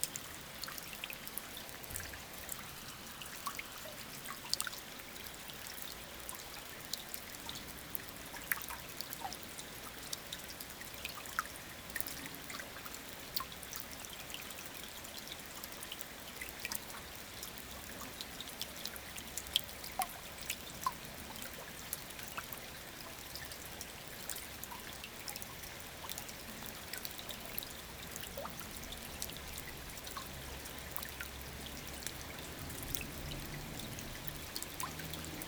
Saint-Martin-de-Nigelles, France - Drouette river
It's the end of a long sad rain. Into an old wash-house and near a farm, the Drouette river flows very quietly.